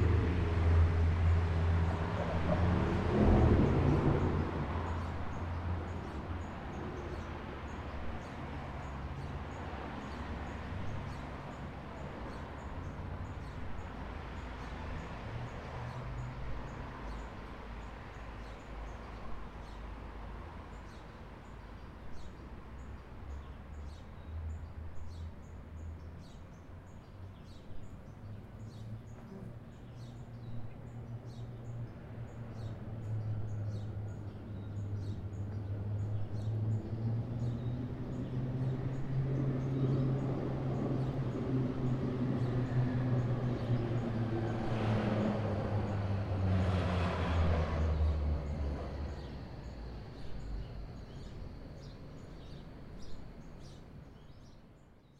Escher Wyss, Zürich, Sound and the City - Sound and the City #20
In den Kleingärten rund um das Nagelhaus, einer der letzten Liegenschaften aus dem 19. Jahrhunderts in diesem Stadtgebiet, haben sich Städter niedergelassen: Stadtvögel, ihr Gesang ist ohrenbetäubend. Stadtvögel singen lauter als Landvögel, darüber kann hier kein Zweifel bestehen. Der Verkehrslärm der viel befahrenen Ausfallstrasse ist in längeren Perioden rhythmisiert, gegeben durch die Phasen der Ampeln. Keine Grüne Welle. Plötzlich surrt eine fette Fliege durch das Klangbild.
Art and the City: Pierre Haubensak (Netz, 2011)
Industriequartier Hard, Zurich, Switzerland, 18 July 2012, 20:40